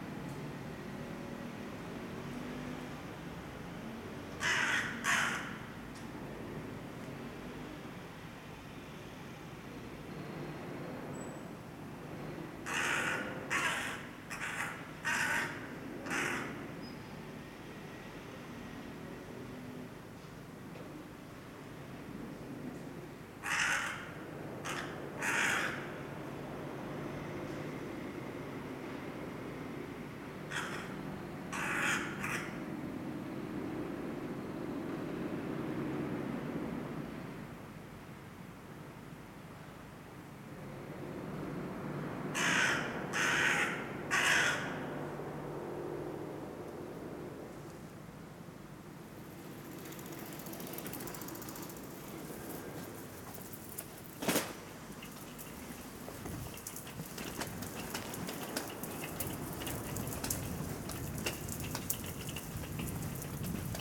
Walking then staring at him on a roof.
Tech Note : Ambeo Smart Headset binaural → iPhone, listen with headphones.